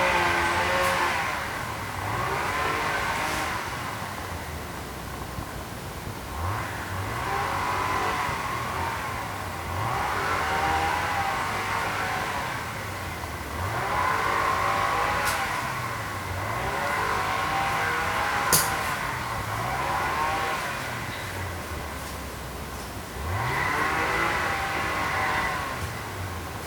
Lough gur, Co. Limerick, Ireland - Wedge tomb
This type of tomb is from the late Neolithic to Early Bronze Age, 2500 - 2000 BCE. Today, there was an awful lot of noise from a strimmer in the nearby garden. You can hear the crows protest against the motor noise.